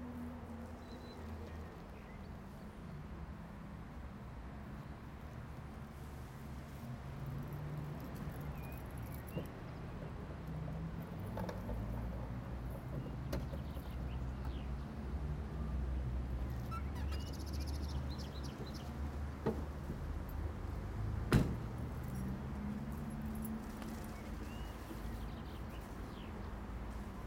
Ruppichteroth, parking site, super market
recorded june 25th, 2008, around 10 p. m.
project: "hasenbrot - a private sound diary"